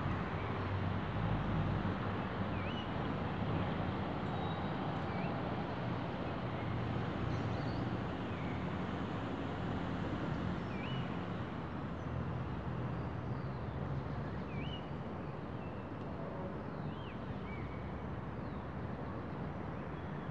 Cl., Bogotá, Colombia - less noisy soundscape.
less noisy soundscape. In this area in the early morning hours, there is little influx of vehicles, some cars and buses pass by, the recording was made near an avenue, some birds are singing in the background.